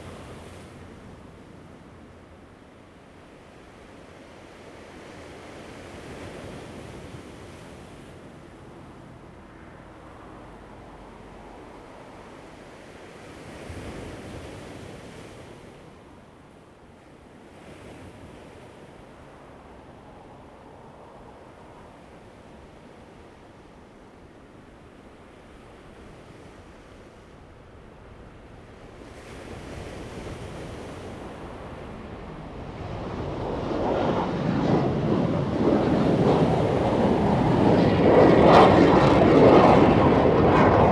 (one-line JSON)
{"title": "Jilin Rd., Taitung City - Standing on the embankment", "date": "2014-09-06 08:48:00", "description": "Standing on the embankment, Waves, Fighter, Traffic Sound, The weather is very hot\nZoom H2n MS+XY", "latitude": "22.79", "longitude": "121.18", "altitude": "9", "timezone": "Asia/Taipei"}